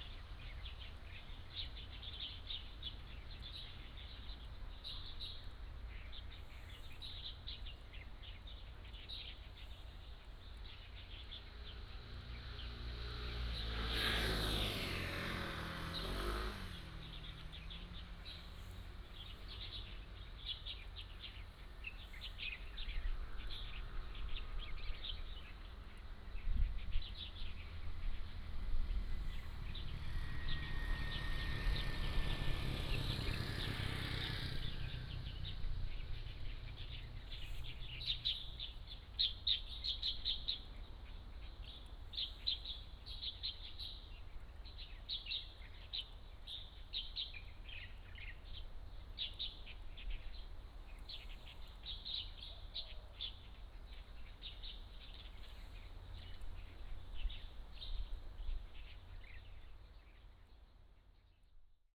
Nangan Township, Taiwan - Birdsong
In the corner of the road, Birdsong, Traffic Sound